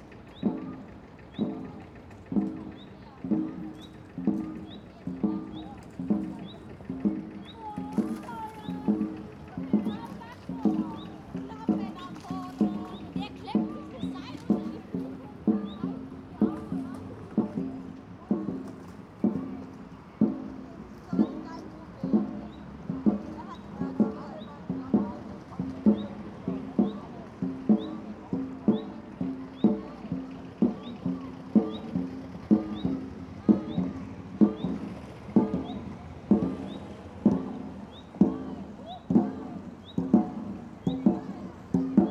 Nürtingen, Deutschland - dragon boat drummer
The local rowing club (RCN) is located on the other side of the river 'Neckar'.
Equipment: Sony PCM-D50
Nürtingen, Germany, October 2013